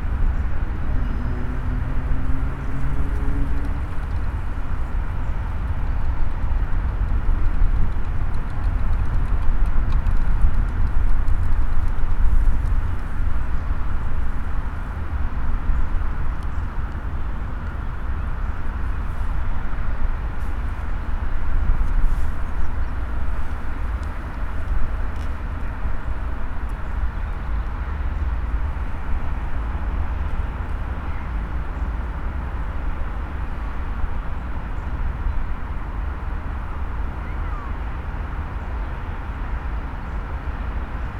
Binckhorst, Laak, The Netherlands - by the train tracks
recorded with binaural DPA mics and Edirol R-44